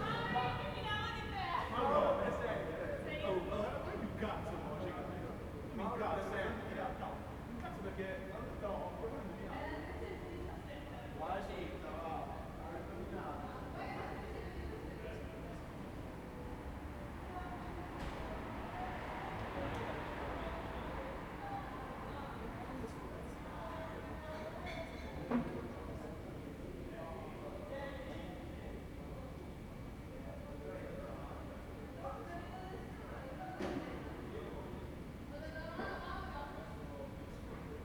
Ascolto il tuo cuore, città, I listen to your heart, city. Several chapters **SCROLL DOWN FOR ALL RECORDINGS** - Round midnight students at college in the time of COVID19: Soundscape
"Round midnight students at college in the time of COVID19": Soundscape
Chapter CXXXVI of Ascolto il tuo cuore, città. I listen to your heart, city
Thursday, October 21st 2020, six months and eleven days after the first soundwalk (March 10th) during the night of closure by the law of all the public places due to the epidemic of COVID19.
Start at 11:36 p.m. end at 00:07 a.m. duration of recording 30’41”